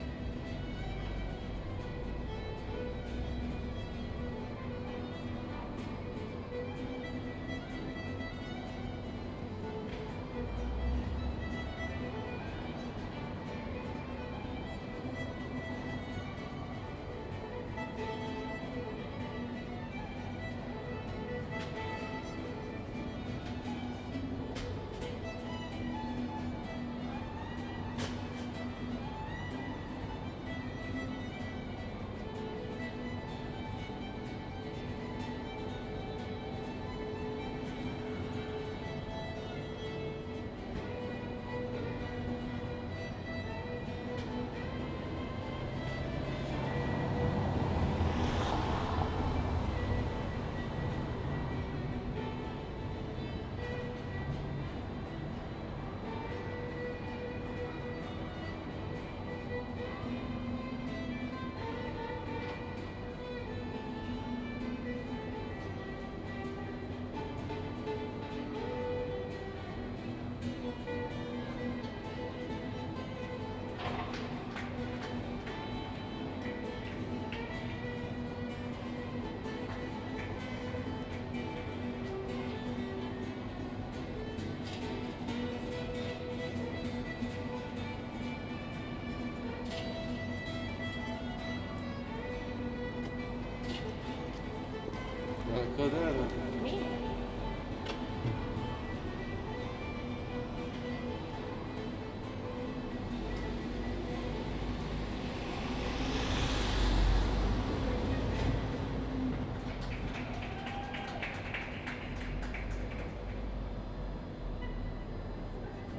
on a saterday night in a jewish orthodox neighborhood it is costomary to sing and play music after the sabbath.
מחוז ירושלים, ישראל, 13 November